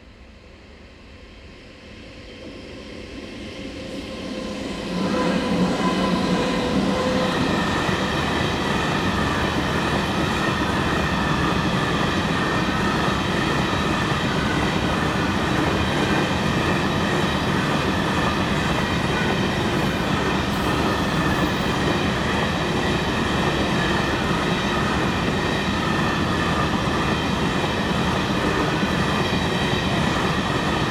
Stallarna, Gällivare, Suède - Ambiance ville Laponie suédoise Nord GALLIVÄRE
P@ysage Sonore NORD SWEDEN, LAPLAND. Meute de chiens puis passage a niveau tinte se baisse et passage train de marchandise!